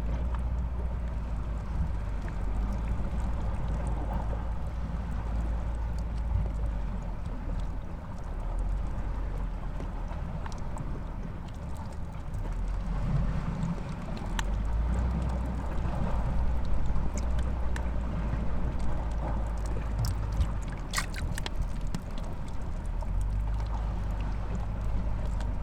{
  "title": "Elafonisi beach, Crete, amongst stones",
  "date": "2019-04-27 12:10:00",
  "description": "microphones amongst the stones near beach",
  "latitude": "35.27",
  "longitude": "23.54",
  "timezone": "Europe/Athens"
}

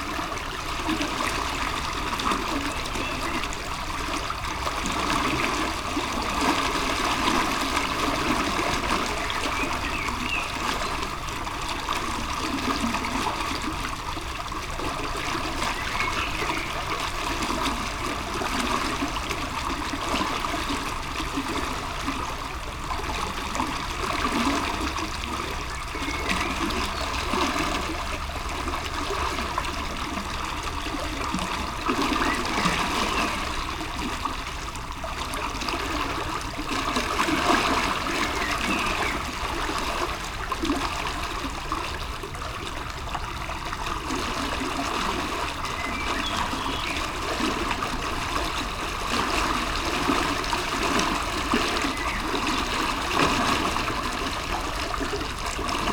{
  "title": "bridge, river drava, maribor - bridge pillar",
  "date": "2014-04-27 20:06:00",
  "latitude": "46.57",
  "longitude": "15.61",
  "altitude": "259",
  "timezone": "Europe/Ljubljana"
}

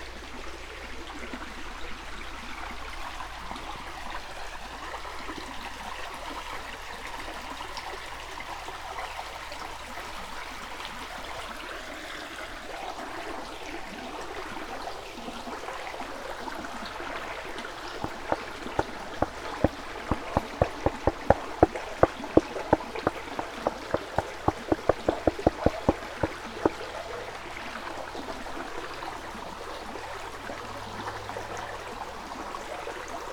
{
  "title": "Kokedera, Kyoto - water stream, walk along, rocks and sand",
  "date": "2014-10-30 12:45:00",
  "latitude": "34.99",
  "longitude": "135.68",
  "altitude": "85",
  "timezone": "Asia/Tokyo"
}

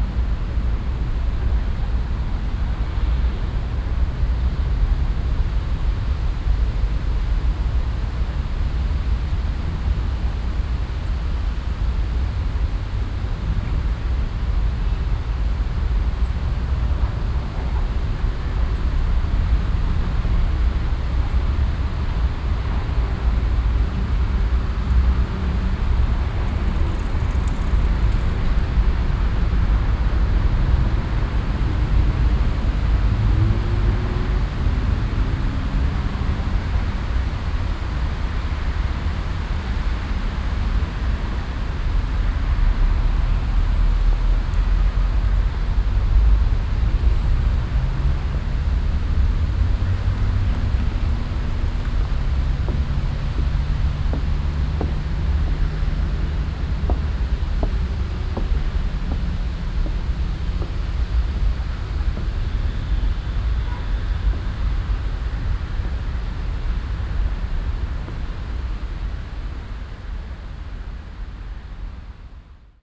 {
  "title": "Düsseldorf, Hofgarten, goldene Brücke - Düsseldorf, Hofgarten, Goldene Brücke",
  "date": "2008-08-21 10:20:00",
  "description": "Mittags auf der hölzernen \"GoldeRen Brücke\". Fussgängerschritte und radfahrer passieren umhüllt vom Verkehrslärm der umgebenden Strassen\nsoundmap nrw: topographic field recordings & social ambiences",
  "latitude": "51.23",
  "longitude": "6.78",
  "altitude": "47",
  "timezone": "Europe/Berlin"
}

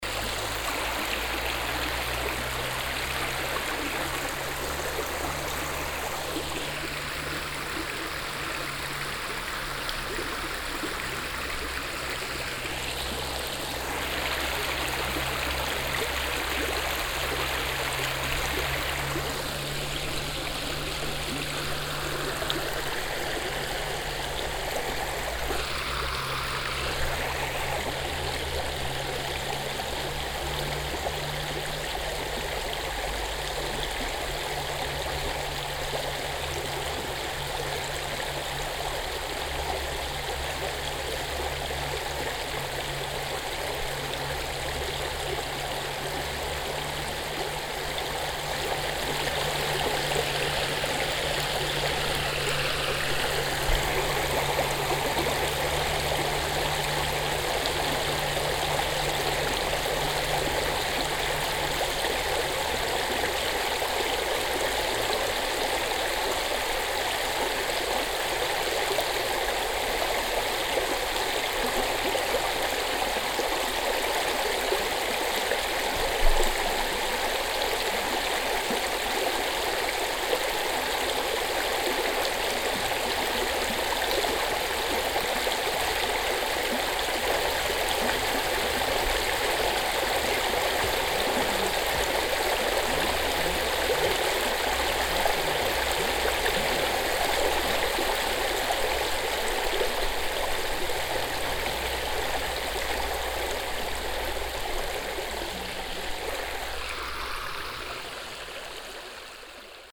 {
  "title": "vianden, camping place, our",
  "date": "2011-08-09 16:32:00",
  "description": "On a warm but windy summer evening. The sound of the Our here gurgling through a low stony riverbed.\nVianden, Campingplatz, Our\nAn einem warmen aber windigen Sommerabend. Das Geräusch der Our, die durch ein flaches steiniges Flussbett gurgelt.\nVianden, terrain de camping, Our\nUn soir d’été chaud mais venteux. Le bruit de la rivière Our qui glougloute à travers son lit de cailloux.\nProject - Klangraum Our - topographic field recordings, sound objects and social ambiences",
  "latitude": "49.93",
  "longitude": "6.22",
  "altitude": "207",
  "timezone": "Europe/Luxembourg"
}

